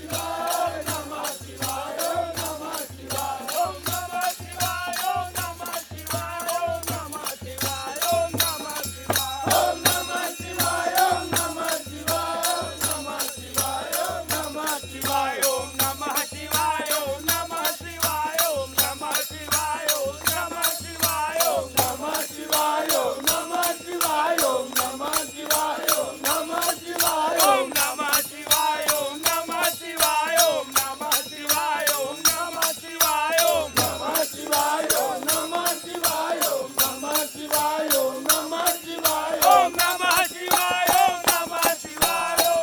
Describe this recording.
Benares, Parade - prière au levée du jour